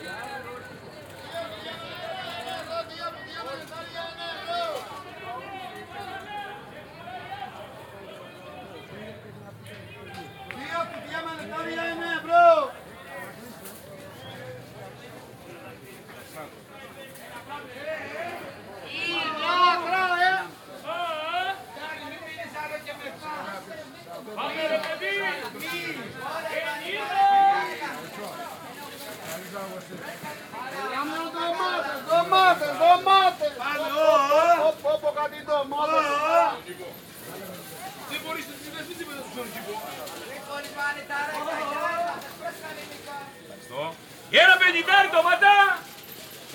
Greenmarket, Athina, Grecja - (527) BI Greenmarket on Saturday